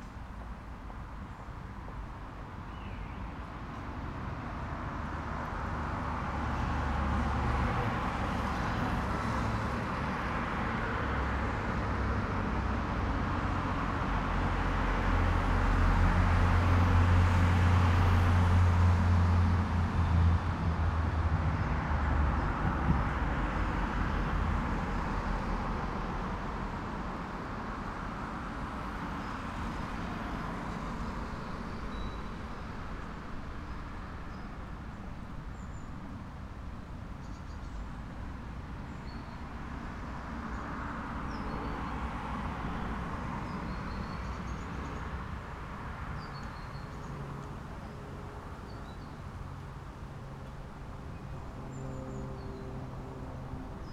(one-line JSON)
{"title": "Köln, Richard-Wagner-Str. - space between", "date": "2010-10-10 14:00:00", "description": "interesting place, kind of these unused spaces inbetween other structures. different kind of traffic sounds", "latitude": "50.94", "longitude": "6.93", "altitude": "53", "timezone": "Europe/Berlin"}